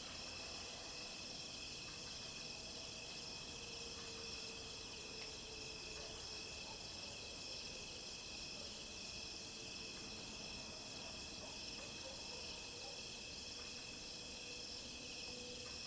Night school, Dog barking, Frog croak, Bugs, traffic sound
Zoom H2n MS+XY